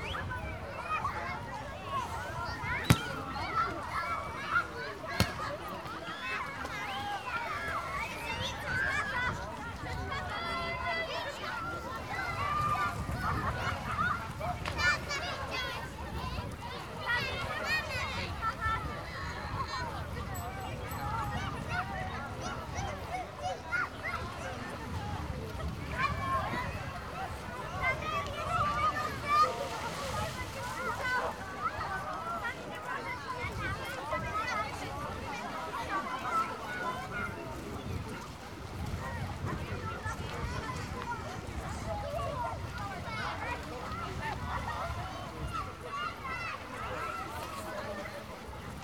Smochowice, Poznan, at Kierskie lake - beach
people relaxing on a small beach enjoying their time at lake in a scorching sun.